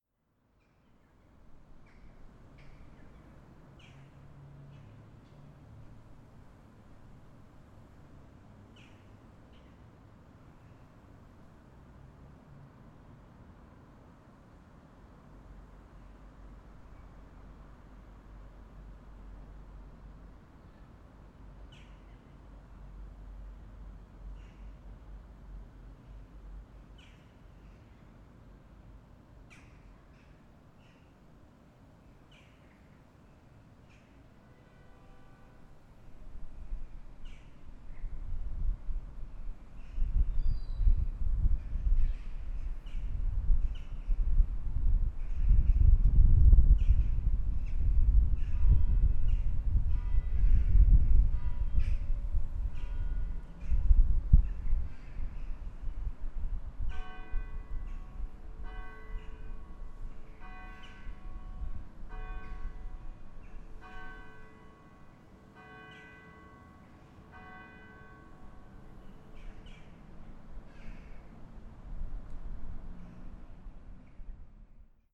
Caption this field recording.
Ambience 7 o'clock. Sorry for the wind.